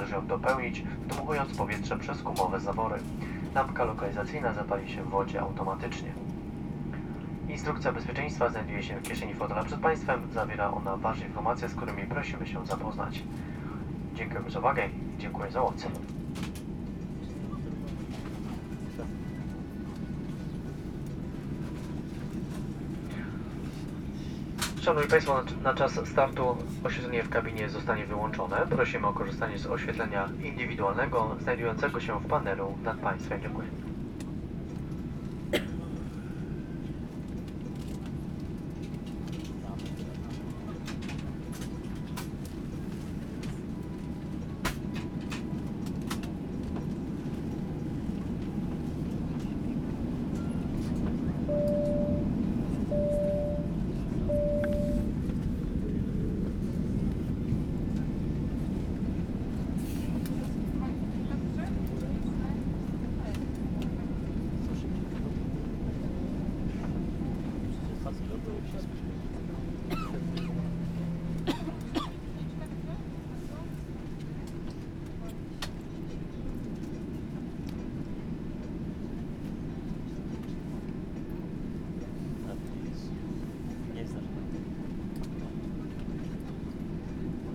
Poznan, Lawica Airport, runway - awaiting for takeoff
right after boarding a boeing 373. the pilot greets the passengers, gives details about the flight, explains safety instructions. excited conversations of those who fly for the first time and those who foresee the plane crashing. clinking safety belt buckles, gushing jet engines.
a lady coughing - she infected me with a bad clod eventually. interesting to have a recording of moment of being infected.
Bukowska, Poznań, Poland, 23 September 2012